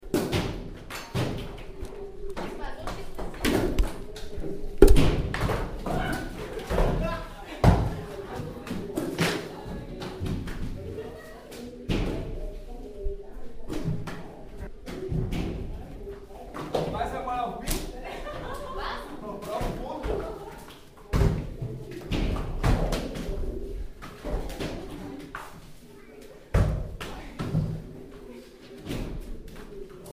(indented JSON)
{
  "title": "Nürnberg, CRAP",
  "description": "Vernissage CRAP, Conflict Research Action Programm @ Akademie Galerie.",
  "latitude": "49.45",
  "longitude": "11.08",
  "altitude": "321",
  "timezone": "GMT+1"
}